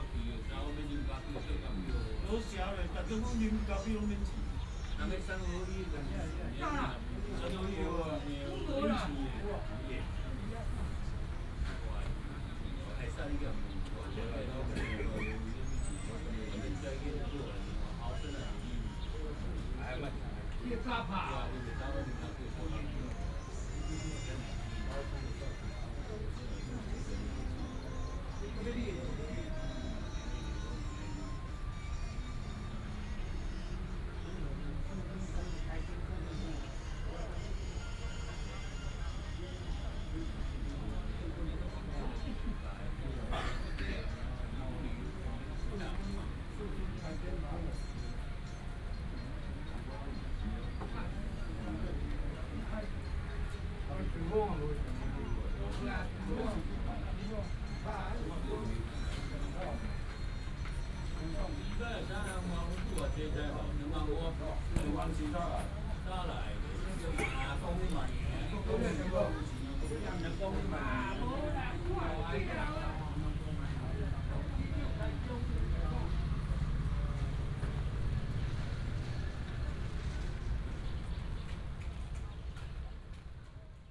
宜蘭中山公園, Luodong Township - in the park
in the park, A group of old people playing cards, Binaural recordings, Sony PCM D100+ Soundman OKM II